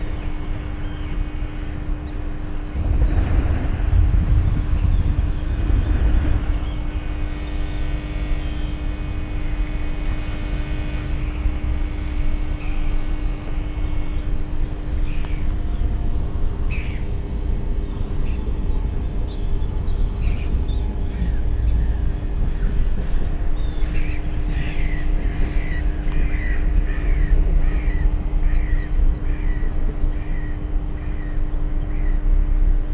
Kopli, Tallinn, Estonia - transformer hous
Transformer drone with trams, trains, birds and distant thunder. Soundfield mic, stereo decode
Harju maakond, Eesti, 9 July 2011, 16:15